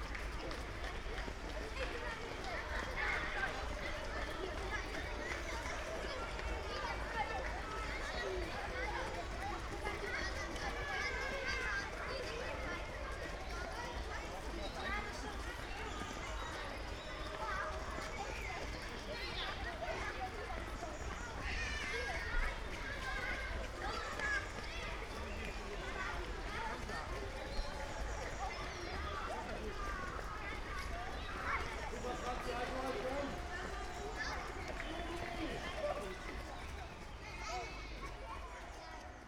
Eversten Holz, Oldenburg - kids marathon in the forest
Brunnenlauf marathon, a flock of kids passing-by in the forest
(Sony PCM D50, Primo EM172)
Oldenburg, Germany, June 2015